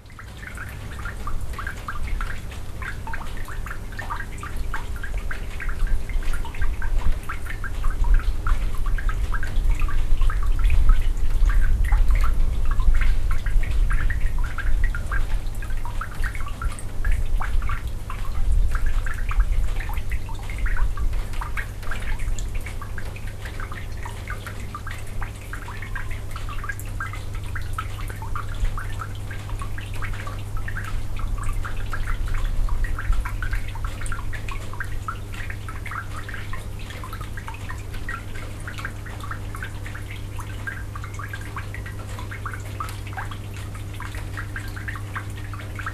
{
  "title": "Snow melting, Hermanni str, Tartu, Estonia",
  "latitude": "58.38",
  "longitude": "26.71",
  "altitude": "69",
  "timezone": "Europe/Berlin"
}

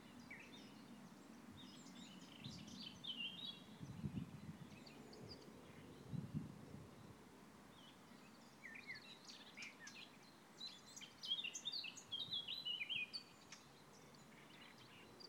{
  "title": "Gießen, Deutschland - LGS Caravansite",
  "date": "2014-04-18 13:55:00",
  "description": "Good Friday on the premises of the Landesgartenschau Gießen: A distant plane and birds chirping. No staff (except for security), no other visitors. Recording was made with an iphone4, Tascam PCM app.",
  "latitude": "50.59",
  "longitude": "8.69",
  "altitude": "162",
  "timezone": "Europe/Berlin"
}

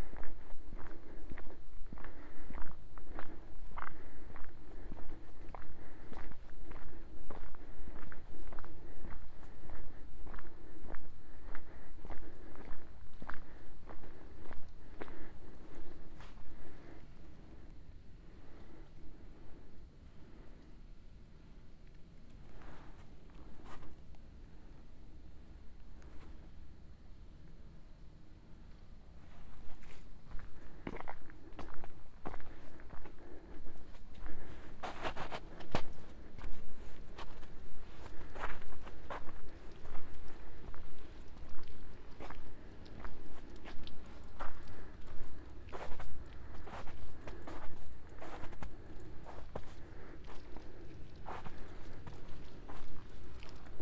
{
  "title": "“Sunsetsound 2020, Levice” a soundwalk in four movements: September 5th & December 21st 2020. SCROLL DOWN FOR MORE INFOS - “Sunsetsound 2020, Levice” a soundwalk in four movements: fourth movement.",
  "date": "2020-12-21 10:07:00",
  "description": "“Sunsetsound 2020, Levice” a soundwalk in four movements.\nSoundwalk in four movements realized in the frame of the project Sunsetsound.\nMovements 1 & 2: Levice, CN, Italy, Saturday, September 5th, 2020:\nFirst movements: start at 5:23 p.m. end at 6:01 p.m. duration 35’29”\nSecond movement: start at 6:33 p.m. end at 7:21 p.m. duration 48’02”\nTotal duration of recording: 01:23:05\nMovement 3&4: same path as Movements 1&2, Monday December 21st at Winter solstice (for this place solstice will happen at 10:02 a.am.).\nThird movement: start at 9:11 end at 10:06, total duration 55’ 13”\nFourth movement: start at 10:07 end at 11:03, total duration 55’ 39”\nAs binaural recording is suggested headphones listening.\nAll paths are associated with synchronized GPS track recorded in the (kmz, kml, gpx) files downloadable here:\nfirst path/movement:\nsecond path/movement:\nthird& fourth path/movement:",
  "latitude": "44.54",
  "longitude": "8.16",
  "altitude": "546",
  "timezone": "Europe/Rome"
}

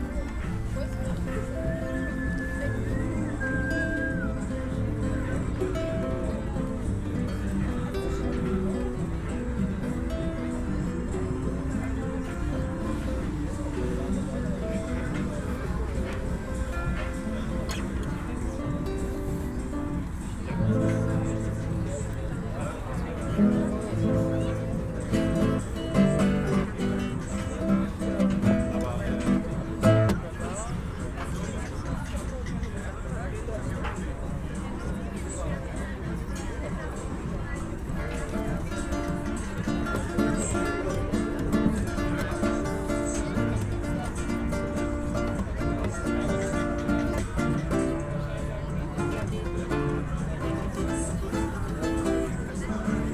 {
  "title": "field near Manheim, Germany - Klimacamp activities, ambience",
  "date": "2013-08-27 18:55:00",
  "description": "a week of protests and activities take place here. Tuesday evening, camp ambience.\n(Sony PCM D50, DPA4060)",
  "latitude": "50.89",
  "longitude": "6.60",
  "altitude": "87",
  "timezone": "Europe/Berlin"
}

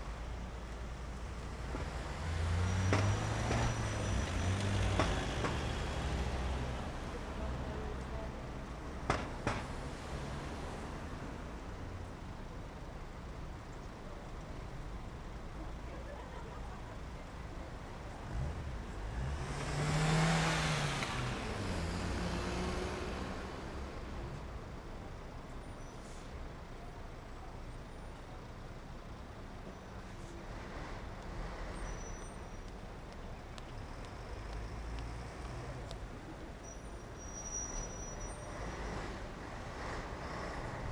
{
  "title": "Walking Holme Sids Cafe",
  "date": "2011-05-29 04:54:00",
  "description": "Traffic passing at the central junction in Holmfirth. Walking Holme",
  "latitude": "53.57",
  "longitude": "-1.79",
  "altitude": "156",
  "timezone": "Europe/London"
}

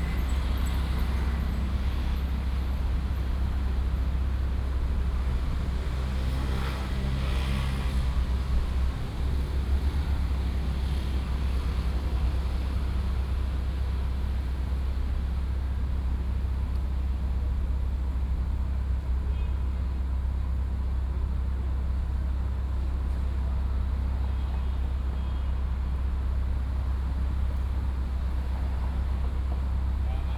{
  "title": "仁慈公園, Da’an Dist., Taipei City - Sultry park",
  "date": "2015-07-24 13:09:00",
  "description": "Sultry park, Traffic Sound",
  "latitude": "25.03",
  "longitude": "121.55",
  "altitude": "26",
  "timezone": "Asia/Taipei"
}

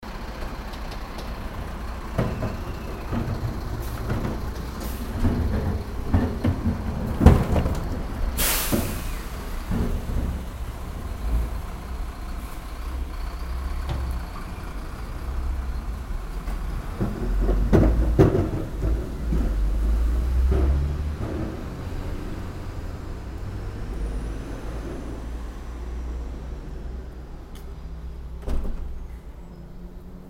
{
  "title": "garbage truck - Köln, garbage truck",
  "description": "may 30, 2008. - project: \"hasenbrot - a private sound diary\"",
  "latitude": "50.92",
  "longitude": "6.96",
  "altitude": "52",
  "timezone": "GMT+1"
}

